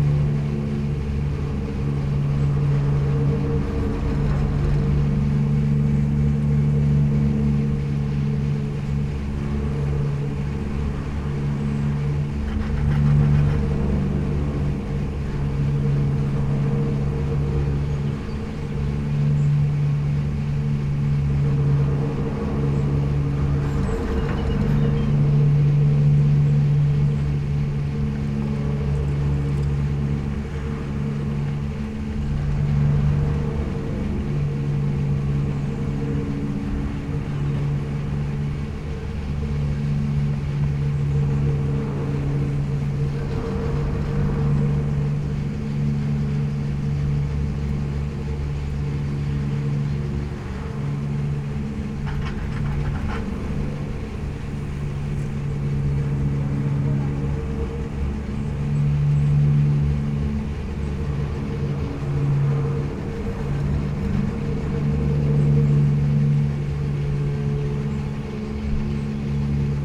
Maribor, Pohorie ropeway station - pole resonating
the resonant poles of the Pohorje ropeway can be heard all over the place. it also seems to be a popular place for downhill bikers, many of them are rushing down the hills. nature is in a rather bad shape here.
(SD702, DPA4060)